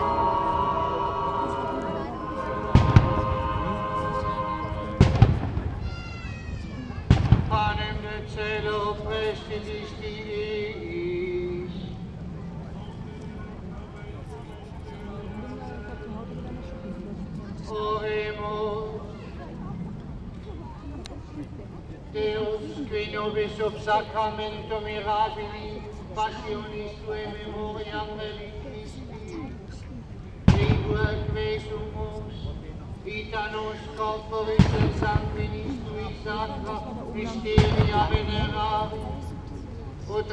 Fronleichnamsprozession der Rheinschiffe, Mülheimer Brücke, Köln, 22.Mai.2008, 11:30
Die Schiffe treiben stop & go stromabwärts, mit dem Heck voran, Bug gegen die Strömung. Gebete, Gesänge, Geschütze...
22 May, 11:30